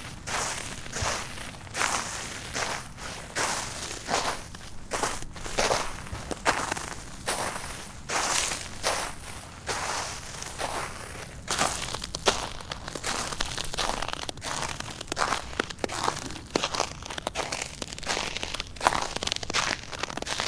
Kinsealy, Ireland - A Peu sobre la Neu Gelada
Walking on frozen snow